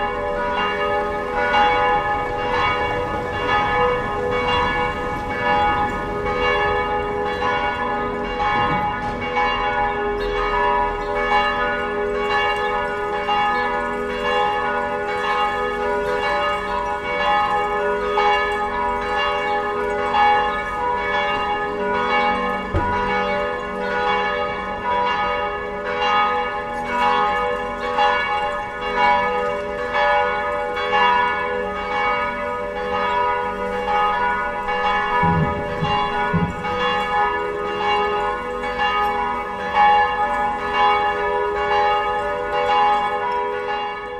numerous church bells in the Torun town hall square
7 April 2011